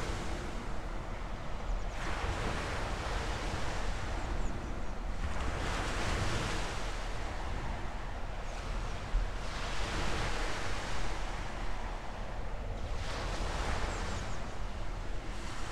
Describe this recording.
listening to the sea from the remains of abandoned military fortification